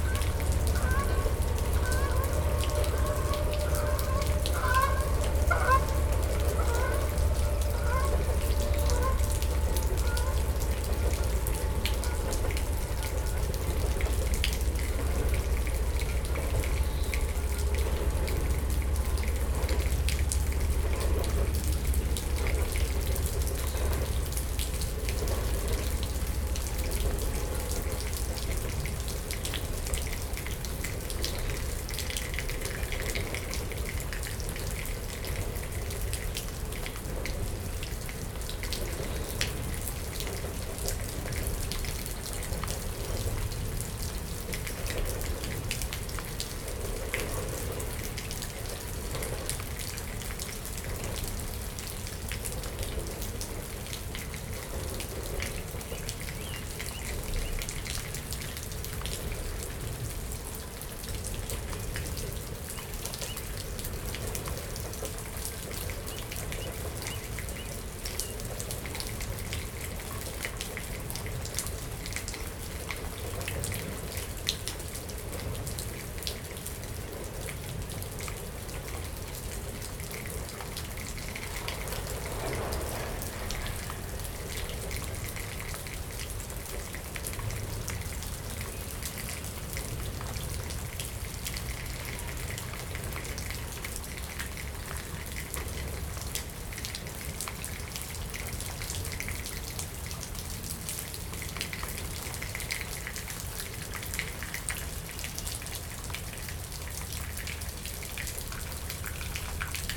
Paderewski Dr, Buffalo, NY, USA - Abandoned Walkway at Buffalo Central Terminal - Dripping Rain & Distant Trains
Buffalo Central Terminal was an active station in Buffalo, New York from 1929 to 1979. Now abandoned, much of the building infrastructure remains and there is active railroad use nearby. This recording is with a H2N as rain falls through the holes in the ceiling of the abandoned walkway and a few trains rumble slowly by in the near distance. City sounds (cars, sirens) can be heard as well (and also a swooping seagull).
New York, United States of America, 18 May 2020